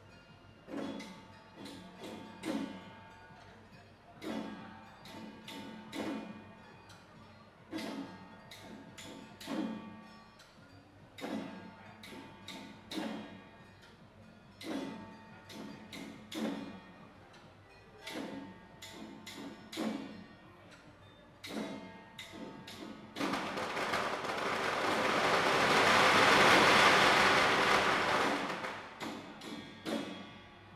大仁街, Tamsui District - Traditional festival
Traditional festival parade
Zoom H2n Spatial audio
Tamsui District, New Taipei City, Taiwan